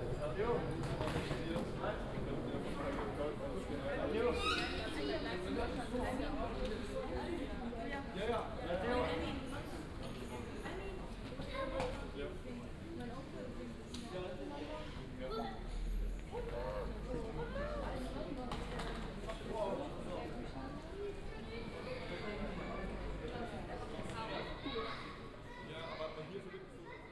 Gare d'Aix-en-Provence TGV, Aix-en-Provence, France - elevator, station ambience
moving from street level to platform level
Cabriès, France